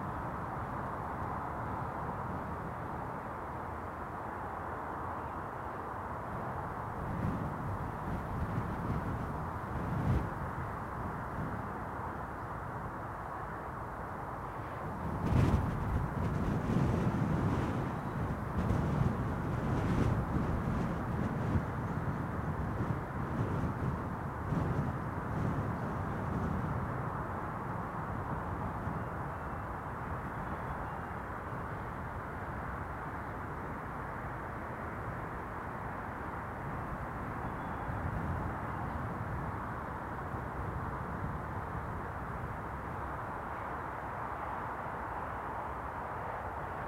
The Drive Moor Place Woodlands Woodlands Avenue Westfield Grandstand Road
Back on rough grass
a lark sings beneath the wind
Distant figures
insect small
a lone runner strides
The smooth horizon north
prickles with buildings in the south